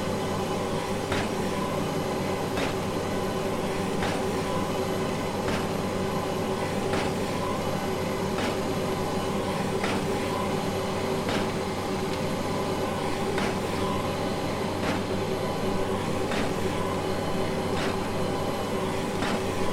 Williams Press, Maidenhead, Windsor and Maidenhead, UK - Digital proofs
This is the sound of digital proofs being printed out at Williams Press, Berkshire, to check all is well with the PDFs before burning metal printing plates for the lithographic printing process.
2 October 2014